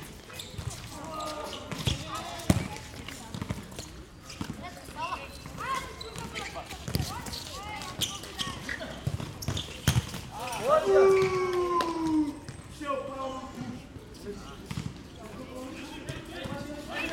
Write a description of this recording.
Close to the Aclimaçao Park, a few young people are playing soccer on a small playground (used as a basket playground too). Recorded by a binaural Setup of 2 x Primo Microphones on a Zoom H1 Recorder